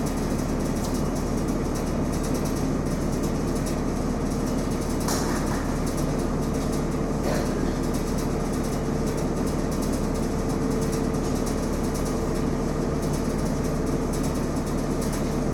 t IJ, Amsterdam - Naar Noord